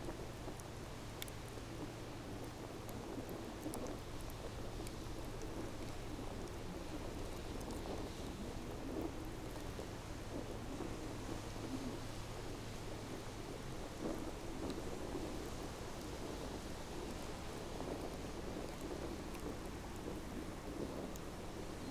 Pispanletto, Oulu, Finland - Campfire on a windy day
Warm and windy day, sitting by a campfire. Zoom H5, default X/Y module.
Manner-Suomi, Suomi, 14 June 2020